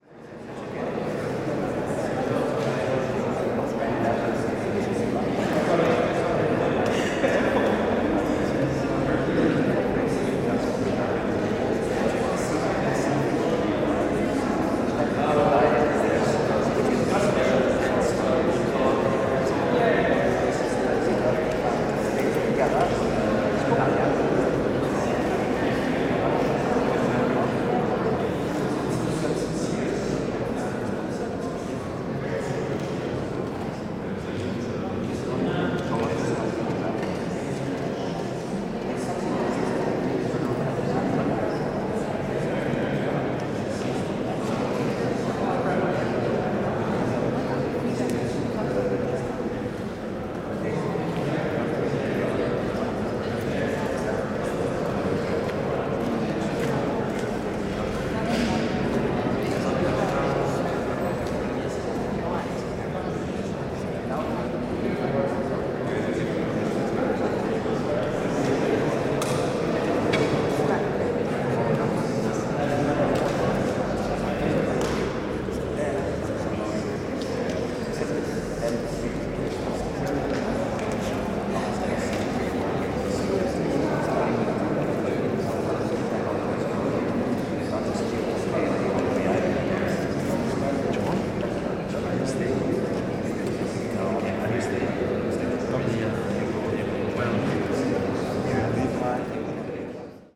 chatter before the concert, Rotermann Hall Tallinn
pre-concert talk in the large Rotermann grain hall
Tallinn, Estonia, 10 July 2011, 21:00